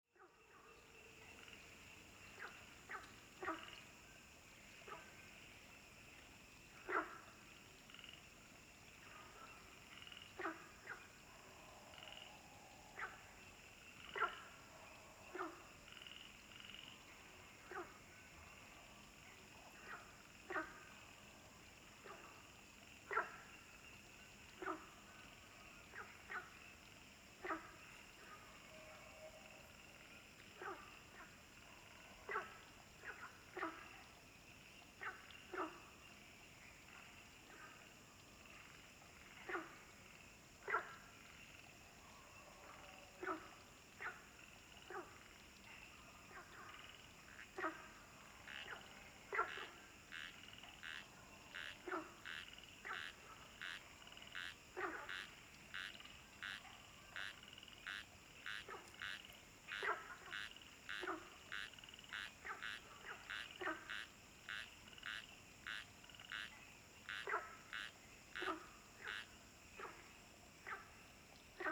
Nantou County, Taiwan - In the pool
Ecological pool, In the pool, Frogs chirping, Bird sounds
Zoom H2n MS+XY